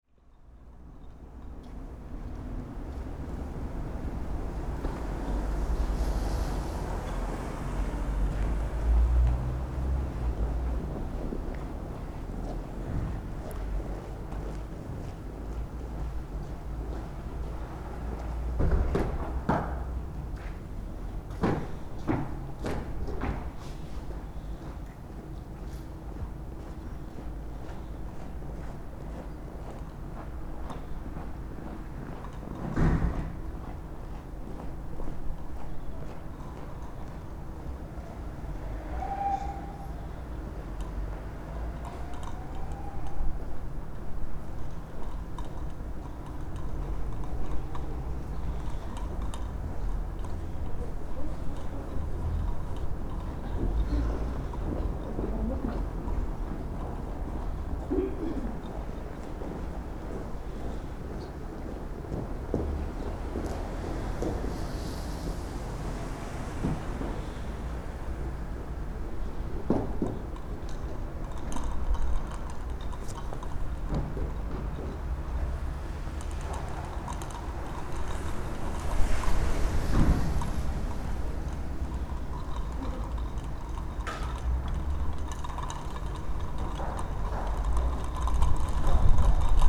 Lithuania, Utena, in a yard
a yard between two buildings. passengers, street ambience, some sound installation above